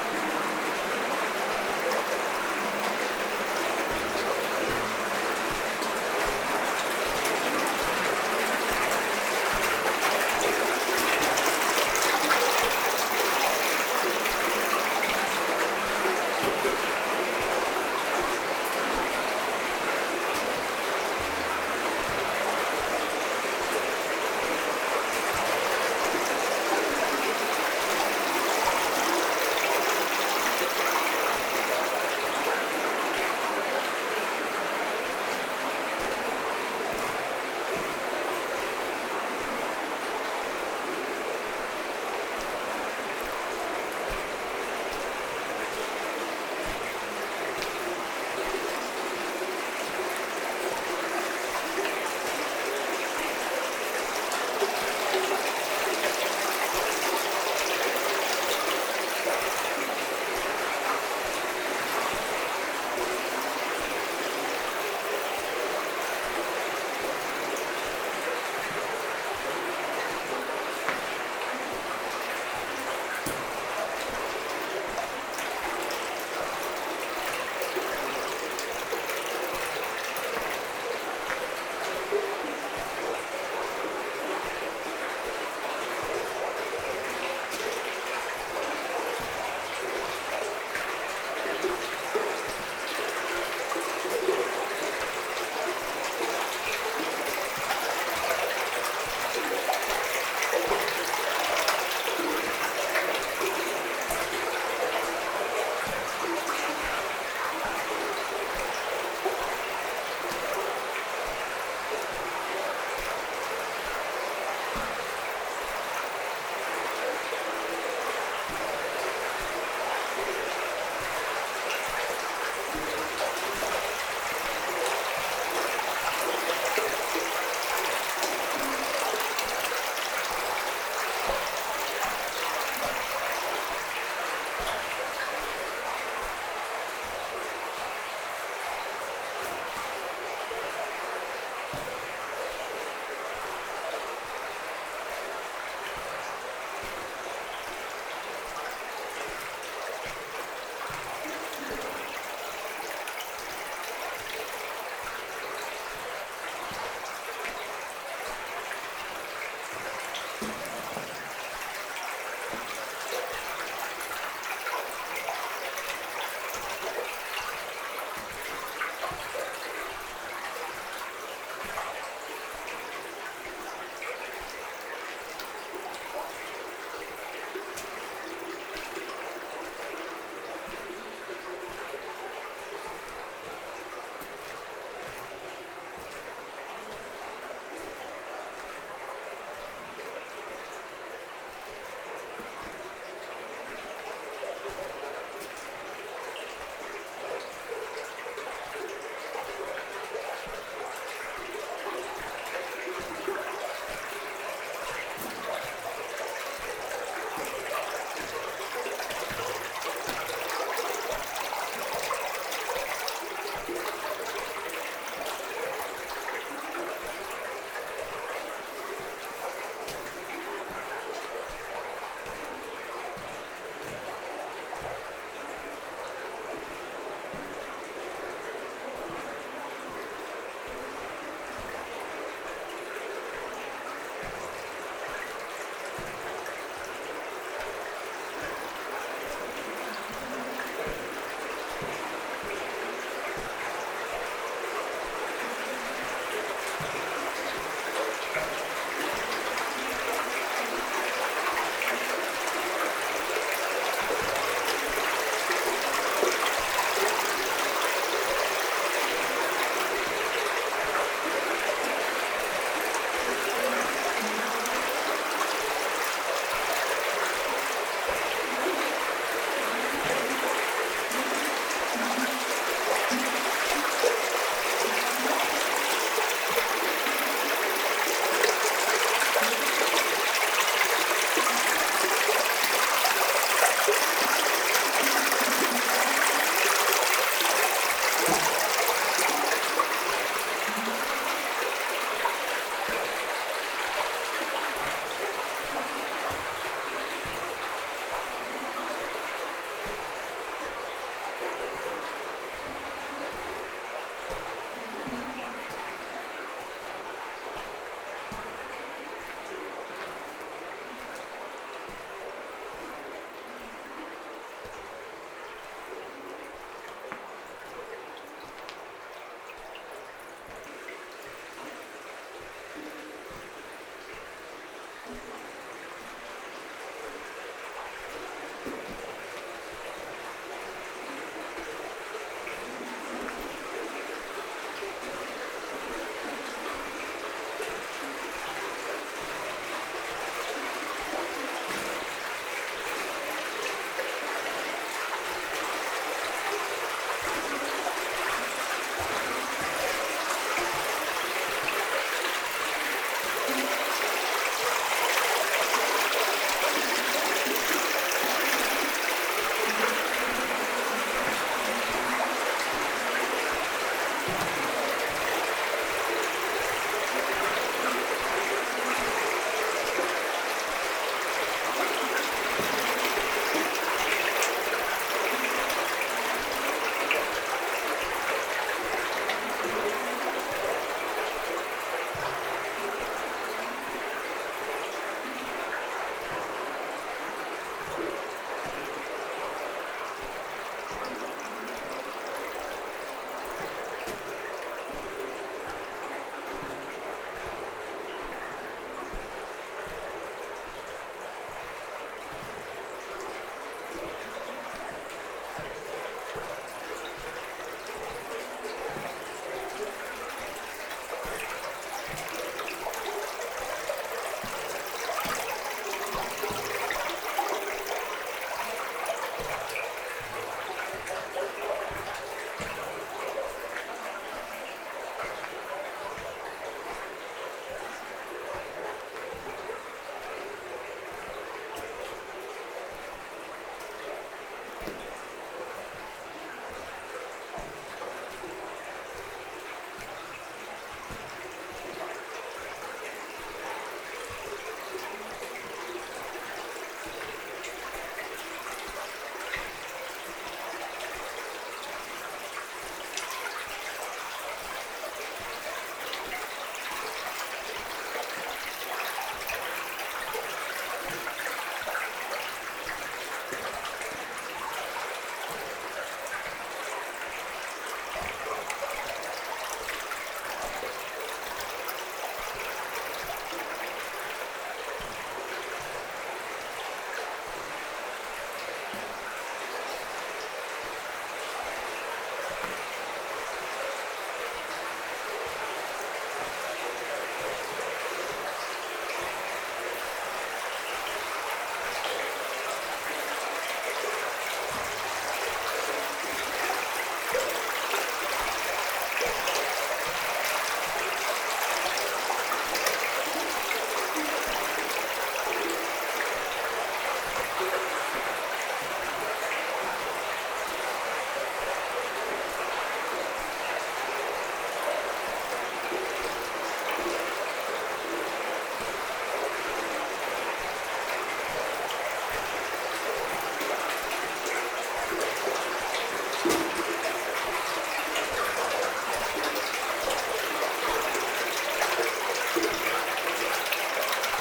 Neufchef, France - Walking in the mine
Longly walking in the underground iron mine, along a stream. Water is going into a flooded area. This stroll is intended to show how the atmosphere is into the mine.